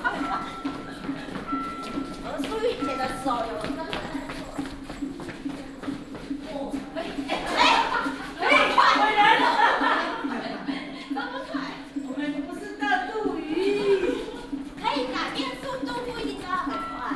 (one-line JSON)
{"title": "善化堂, 埔里鎮珠格里 - Community Theatre and chanting", "date": "2016-03-24 20:09:00", "description": "Community Theatre courses, On the square in the temple chanting, Rainy Day", "latitude": "23.94", "longitude": "120.96", "altitude": "469", "timezone": "Asia/Taipei"}